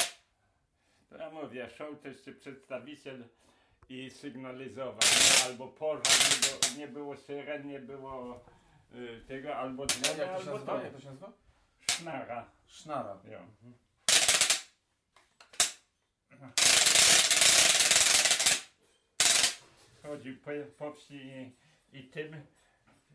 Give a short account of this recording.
Dźwięki nagrane w ramach projektu: "Dźwiękohistorie. Badania nad pamięcią dźwiękową Kaszubów." The sounds recorded in the project: "Soundstories. Investigating sonic memory of Kashubians."